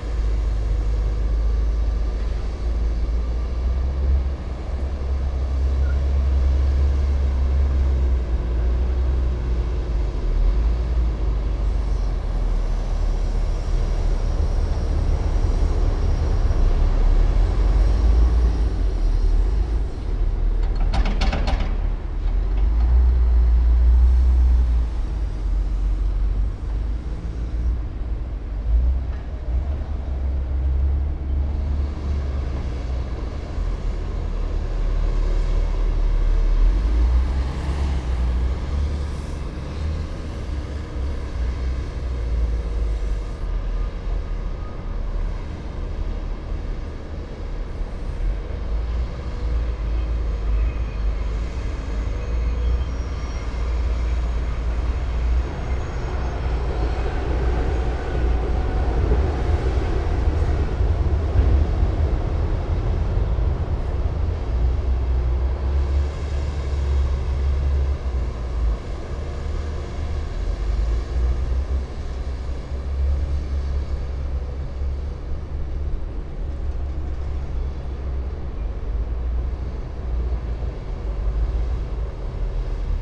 different excavators, bulldozers and trucks during earthwork operations
april 11, 2016
Deutschland, European Union